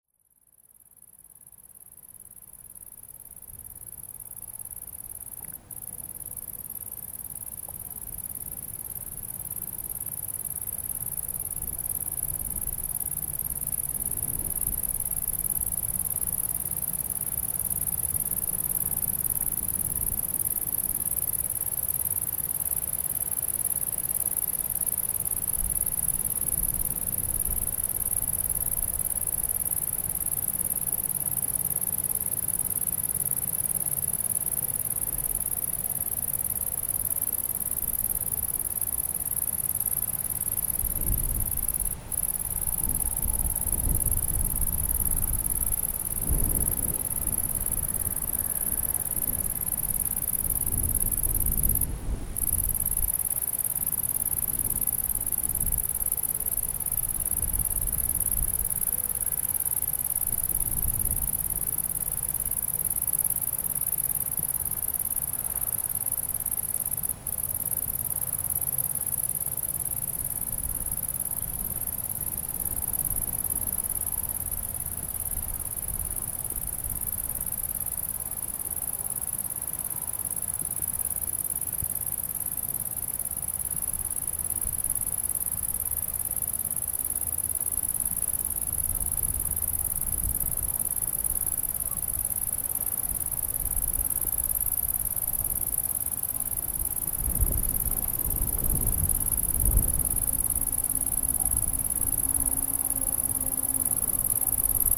Chaumont-Gistoux, Belgique - Criquets
Criquets sing in the shoulder, impossible to see, but what a concert... It's the summer signal in our countrysides. At the end of the recording, especially one is very nervous !
Chaumont-Gistoux, Belgium